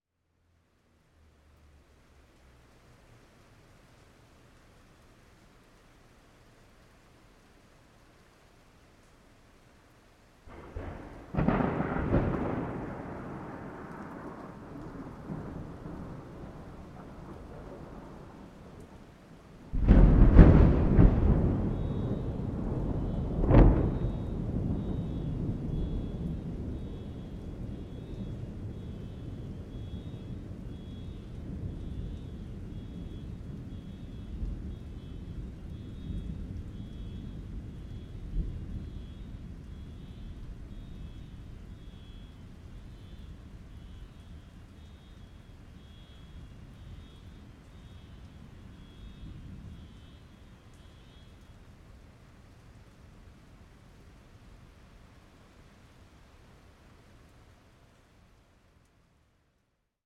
W 135th St, New York, NY, USA - Harlem Thunder
Louder than usual thunder (due to a thermal inversion condition) triggers distant car alarms during a quiet morning in Central Harlem, NYC. Raining and ~52 degrees F. Tascam Portacapture X8, X-Y internal mics facing north out 2nd floor apartment window, Gutmann windscreen, Gitzo tripod. Normalized to -23 LUFS using DaVinci Resolve Fairlight.